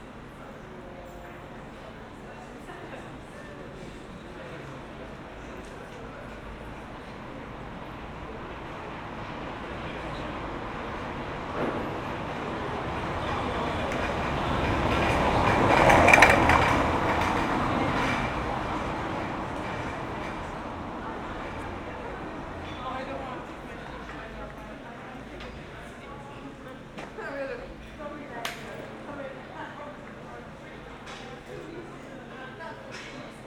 cyclists, passers by, taxis
the city, the country & me: july 7, 2012
Berlin, Germany, 7 July 2012